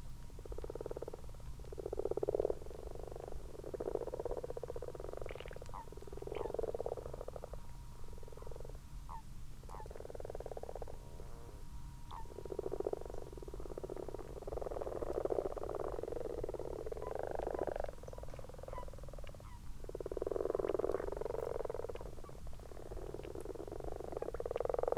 Malton, UK - frogs and toads ...
common frogs and common toads in a garden pond ... xlr sass on tripod to zoom h5 ... time edited unattended extended recording ... bird calls ... pheasant at end of track ... the pond is now half full of frog spawn ...
England, United Kingdom, 21 March 2022